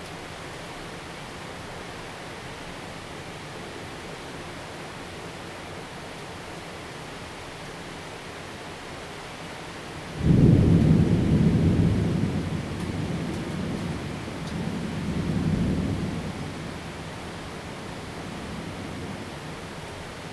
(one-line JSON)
{"title": "Rende 2nd Rd., 桃園市八德區 - Thunderstorm", "date": "2020-08-14 18:38:00", "description": "Thunderstorm, rain, Traffic sound\nZoom H2n MS+XY", "latitude": "24.94", "longitude": "121.29", "altitude": "140", "timezone": "Asia/Taipei"}